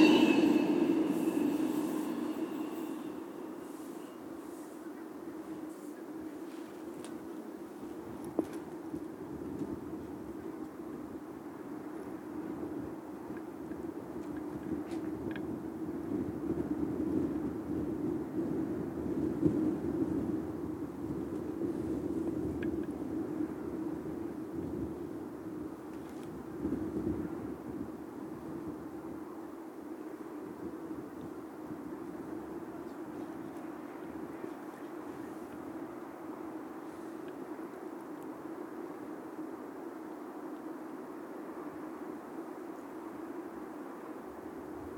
field recording from the new railway bridge